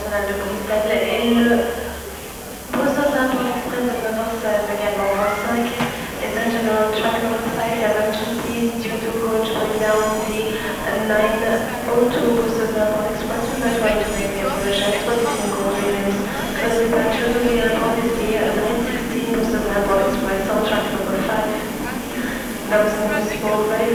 Electric buzz coming from the neon lights.